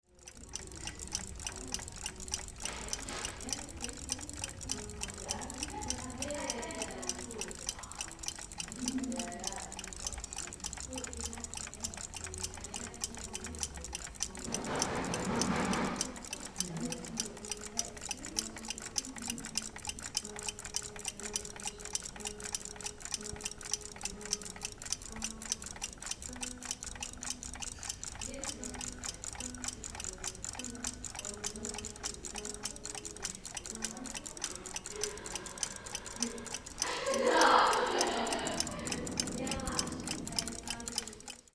bonifazius, bürknerstr. - wecker / alarm clock ensemble
06.02.2009 11:30 ensemble aus ca. 10 weckern, kleiner junge spielt, gäste im hintergrund / about 10 alarm clocks ticking, little boy plays the electric piano, guests in the background